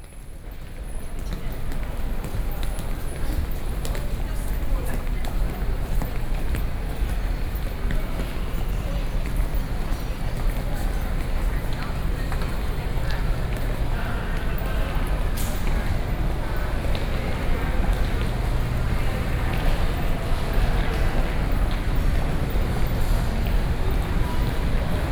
New Taipei City, Taiwan - Train station and underground mall
Banqiao District, New Taipei City, Taiwan